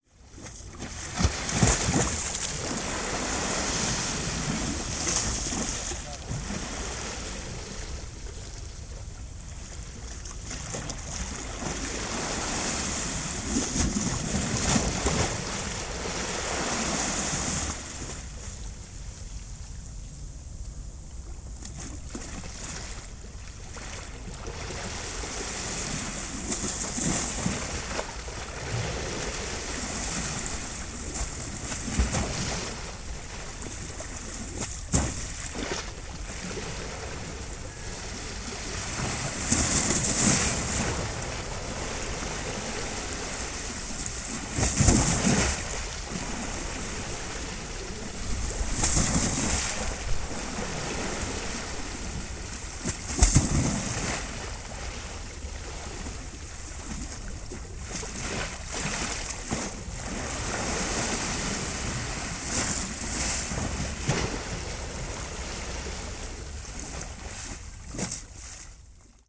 Close-up recording of waves at high tide late at night.
9 August, 23:06